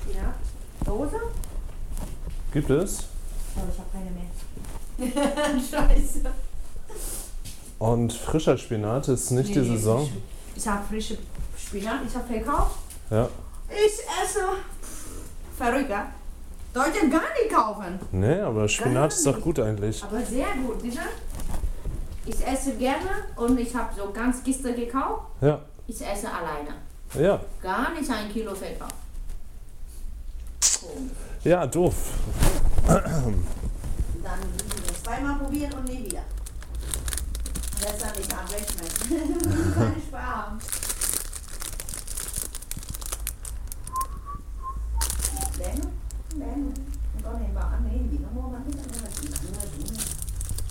Spinat an der Brückenstr
Spinat leider keine
Berlin, Germany, 6 February 2010